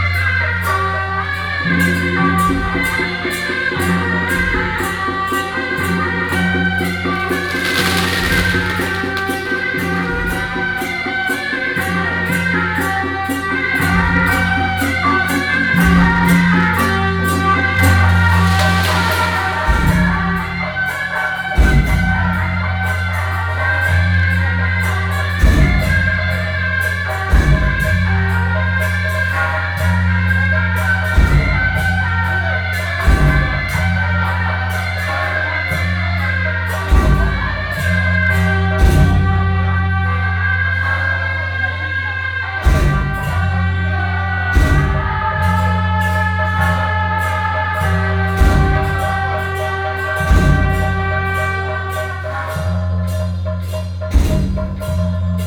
淡水清水巖, New Taipei City - Walking in the area of the temple
Walking through the traditional market, Walking in the temple, traffic sound
New Taipei City, Taiwan